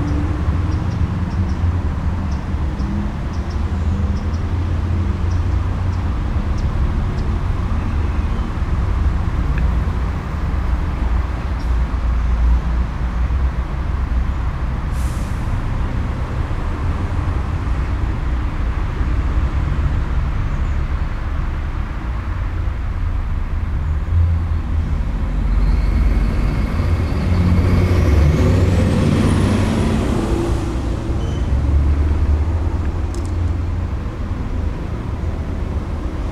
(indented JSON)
{
  "title": "Kangoeroepad, Zoetermeer",
  "date": "2010-10-13 17:13:00",
  "description": "Next to a big road",
  "latitude": "52.06",
  "longitude": "4.50",
  "timezone": "Europe/Amsterdam"
}